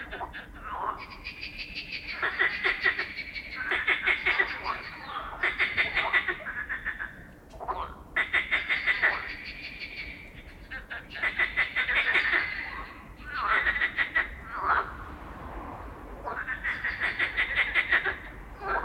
Botanical Gardens of Strasbourg University, Rue Goethe, Strasbourg, Frankreich - night frogs
night frogs in town during tteh confinement